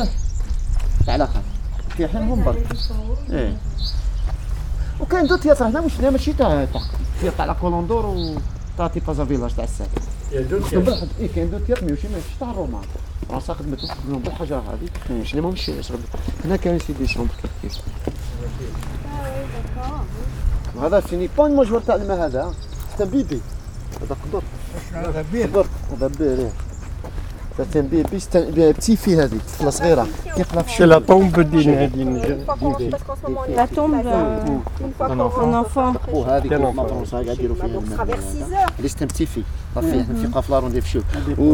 Tipaza, Algeria, Roman ruins.
Les ruines romaines de Tipasa.